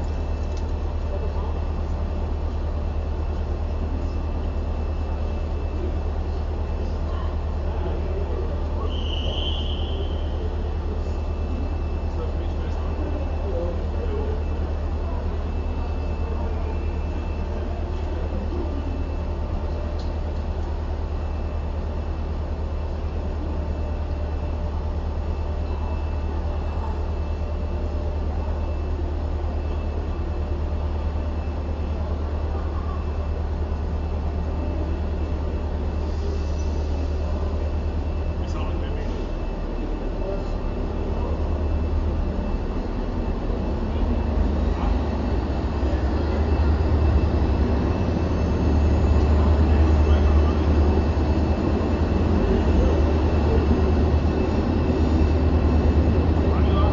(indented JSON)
{
  "title": "Copenhagen main station, silence before travelling",
  "description": "There is a clear moment of deepest inner silence before stepping on a train, a moment of contemplation about what you leave behind and a moment of greatest curiosity of what you will find along the way.",
  "latitude": "55.67",
  "longitude": "12.57",
  "altitude": "7",
  "timezone": "Europe/Berlin"
}